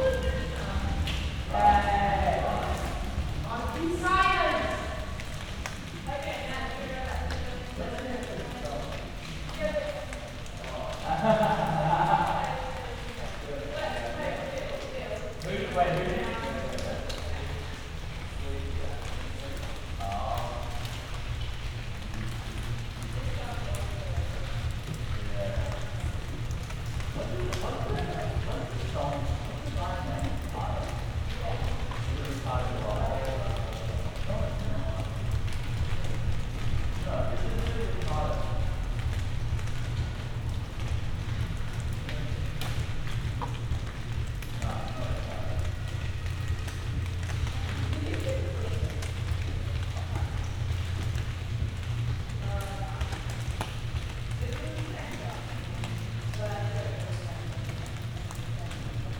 berlin, friedelstraße: backyard window - the city, the country & me: backyard window
stoned tourist party people, neighbour complains about noise, raindrops hitting leaves, strange unidentified "machine" noise
the city, the country & me: july 19, 2012
99 facets of rain